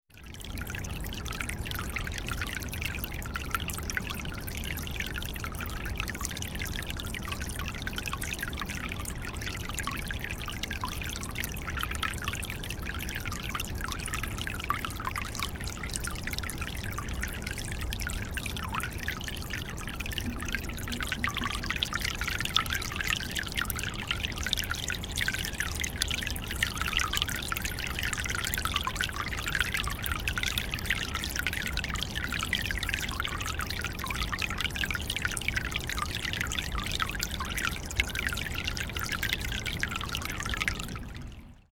Water: Falls of the Ohio
Close up recording of water coming out of stones.
IN, USA, 13 October 2010